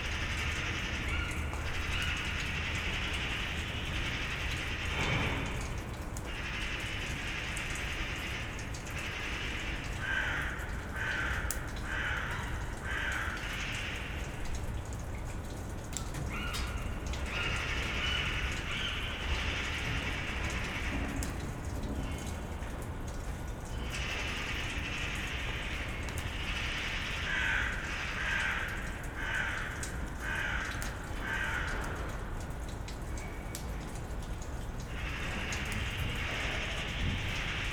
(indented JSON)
{
  "title": "Berlin Bürknerstr., backyard window - melting snow, birds",
  "date": "2012-12-15 10:55:00",
  "description": "melting snow, drips and drops, raptor (which one?) confuses crows and magpies.",
  "latitude": "52.49",
  "longitude": "13.42",
  "altitude": "45",
  "timezone": "Europe/Berlin"
}